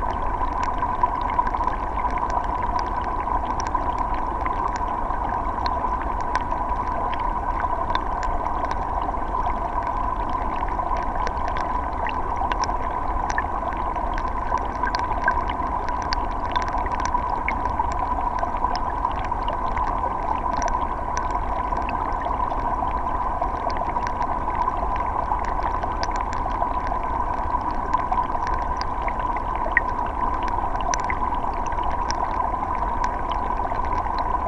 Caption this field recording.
Underwater microphones right before the beaver dam